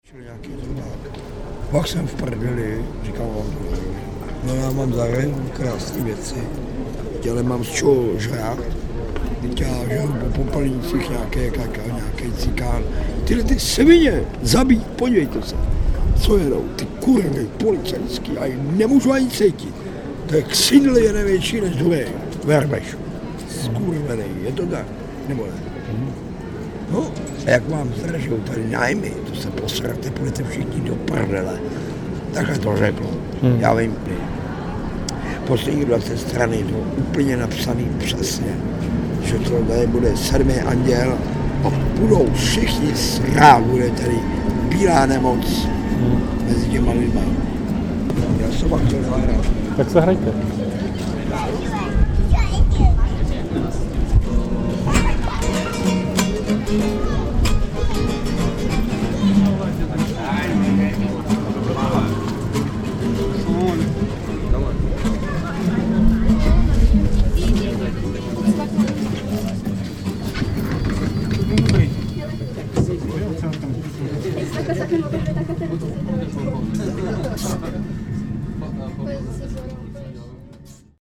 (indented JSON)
{
  "title": "Prague, Czech Republic - Street musician lamento",
  "date": "2012-04-11 21:29:00",
  "description": "Prophecy of the homeless street musician with guitar at Můstek, near the Billa supermarket about the future of the world.",
  "latitude": "50.08",
  "longitude": "14.43",
  "altitude": "212",
  "timezone": "Europe/Prague"
}